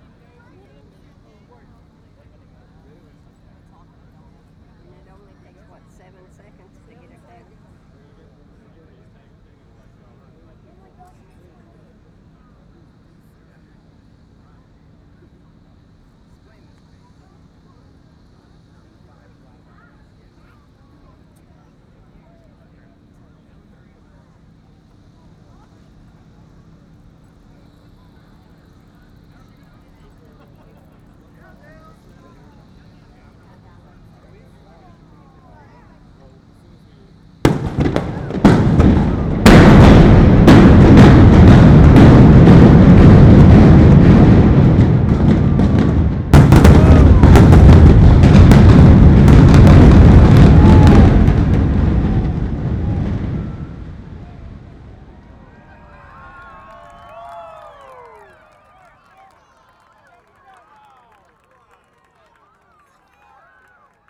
The demolition of a ten story building, constructed near Union Station in 1928 to house traveling salesmen. The implosion was executed to make way for high rise luxury apartments. 400 holes were drilled, 350 lbs. of dynamite was inserted, and...
Houston, TX, USA, 9 December, 12:30